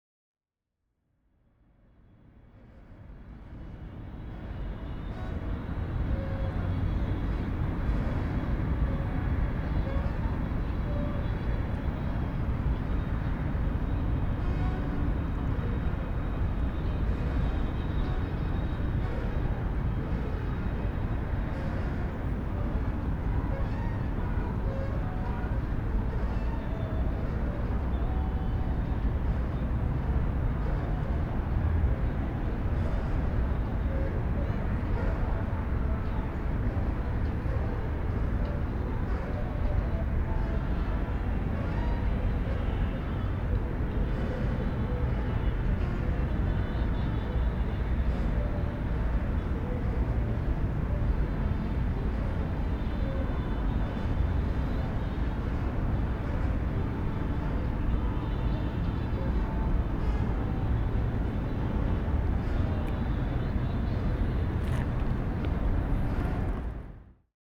대한민국 서울특별시 서초구 반포2동 649-1 - Han river, Banpo Hangang Park, Dockside Metal Squeak
Banpo Hangang Park, soft water splash, dockside squeaking metal sound.
반포한강공원, 물소리 철썩철썩, 부둣가 쇳소리